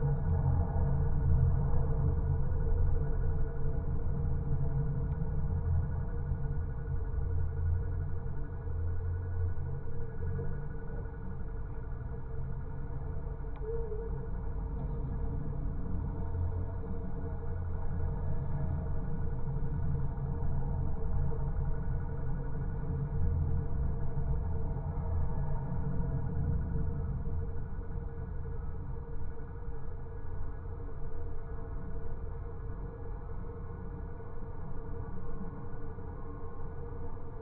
Metallic Bridge - Geofon recording - 1800-255 Lisboa, Portugal - Metallic Bridge - Geofon recording
Geofon recording of a pedestrian metallic bridge, over a busy highway. Recorded with a zoom H5 and a LOM Geofon.
Área Metropolitana de Lisboa, Portugal, October 2020